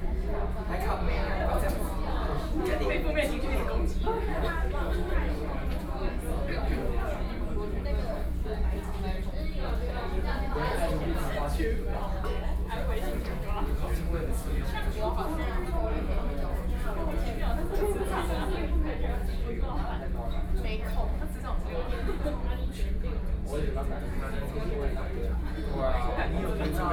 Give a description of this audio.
at McDonald's, High school students in dialogue, Sony PCM D50 + Soundman OKM II